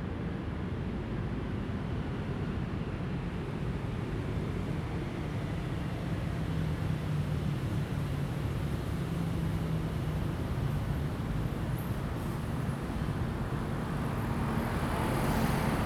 Sec., Dunhua S. Rd., Da'an Dist. - Traffic Sound
Traffic Sound
Zoom H2n MS+XY
Taipei City, Taiwan